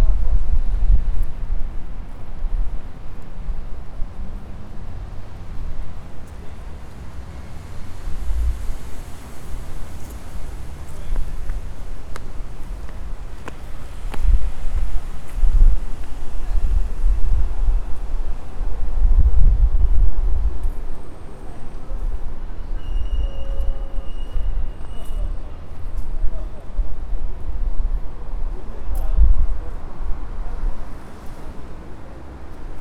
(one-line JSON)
{"title": "Parque Trianon - Tenente Siqueira Campos - Rua Peixoto Gomide, 949 - Cerqueira César, São Paulo - SP, 01409-001 - Ponte do Parque Trianon", "date": "2019-04-25 12:00:00", "description": "O áudio da paisagem sonora foi gravado na começo da ponte dentro do Parque Trianon, em São Paulo - SP, Brasil, no dia 25 de abril de 2019, às 12:00pm, o clima estava ensolarado e com pouca ventania, nesse horário estava começando o movimento dos transeuntes de São Paulo na hora do almoço. Foi utilizado o gravador Tascam DR-40 para a captação do áudio.\nAudio; Paisagem Sonora; Ambientação;Parque Trianon", "latitude": "-23.56", "longitude": "-46.66", "altitude": "831", "timezone": "America/Sao_Paulo"}